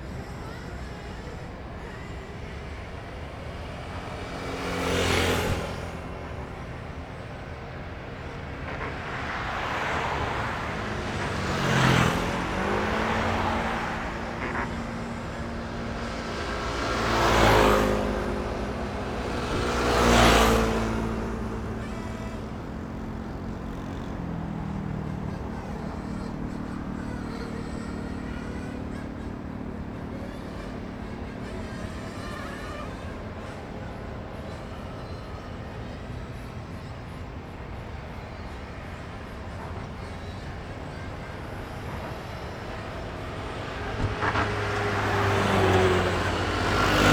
Erchong Floodway, Sanzhong District, New Taipei City - Traffic noise
Traffic noise, Remote control car, Zoom H4n+Rode NT4
12 February 2012, 16:46